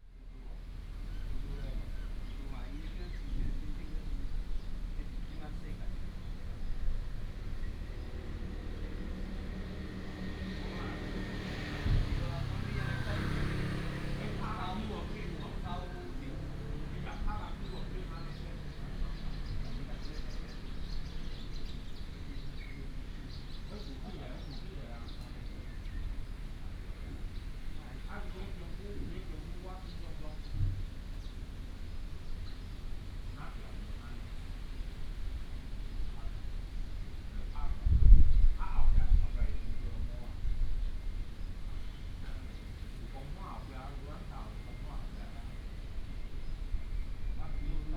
泉州厝公園, Houli Dist., Taichung City - small Park
small Park, traffic sound, A group of workers sleep in the park, Birds sound, Binaural recordings, Sony PCM D100+ Soundman OKM II